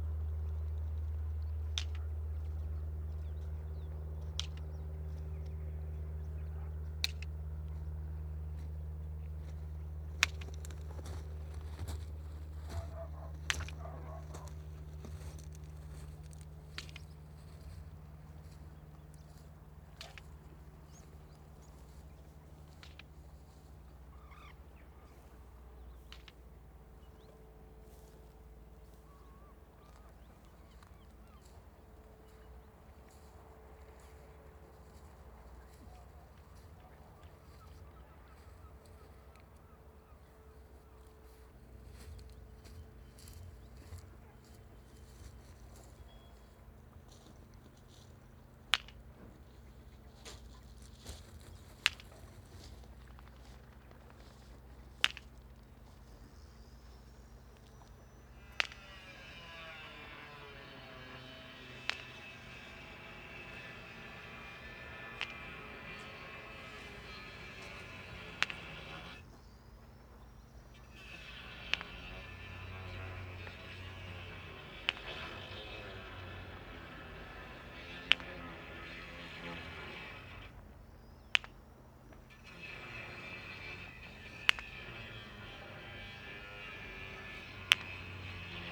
Clicking stones in front of the 60m concrete sound mirror, New Romney, UK - Clicking stones in front of the 60m concrete sound mirror
The Dungeness Sound Mirrors were built in the 1920s to listen for enemy aircraft approaching across the English Channel. They were never so effective - the plane being in sight before it could be accurately located - and were quickly superseded by the invention of radar. Today they are inaccessible inside the Dungneness Nature Reserve, but in 2003 it was possible to walk up to them. This recording is the sound of stones being clicked by my friend Dana as she walks slowly along the 60meter length of this vast concrete listening wall. The clicks echo from its hard surface. Propeller planes from nearby Lydd airport and building work from nearby houses are the sonic backdrop.
South East England, England, United Kingdom, 22 September 2003